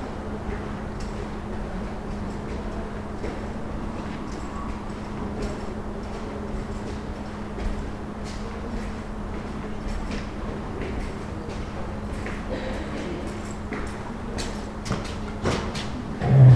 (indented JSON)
{
  "title": "Limburg Süd, ICE Bahnhof / station - Fußgängerüberführung / pedestrian bridge",
  "date": "2009-08-03 12:35:00",
  "description": "Fußgängerbrücke über den Gleisen, Aufzug\nDer Bahnhof Limburg Süd liegt in der Nähe der mittelhessischen 36.000-Einwohner-Kreisstadt Limburg auf dem Eschhöfer Feld-Gebiet des Limburger Stadtteils Eschhofen beim Streckenkilometer 110,5 der Schnellfahrstrecke Köln–Rhein/Main [...]Durchfahrende ICE können den Bahnhof darauf ohne Geschwindigkeitsverminderung mit bis zu 300 km/h passieren.\nPedestrian bridge over tracks, elevator\nThe station is served by regular InterCityExpress services. Due to Limburg's relatively small size, passenger traffic is rather low, although commuters to Frankfurt am Main value the fast connections. Some 2,500 people use the station daily. The station has four tracks in total, of which two are equipped with a platform and two allow through trains to pass the station unobstructed at speeds of up to 300 km/h. Track one's platform, used by trains to Frankfurt, Mainz and Wiesbaden, also houses the ticket office.",
  "latitude": "50.38",
  "longitude": "8.10",
  "altitude": "180",
  "timezone": "Europe/Berlin"
}